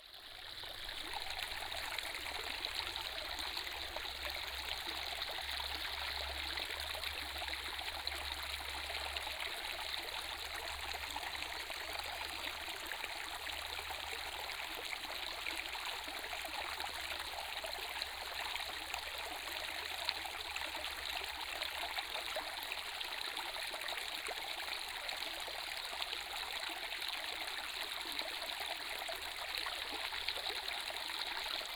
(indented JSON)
{
  "title": "中路坑溪, Puli Township - The sound of water streams",
  "date": "2015-04-29 08:34:00",
  "description": "The sound of water streams",
  "latitude": "23.94",
  "longitude": "120.92",
  "altitude": "492",
  "timezone": "Asia/Taipei"
}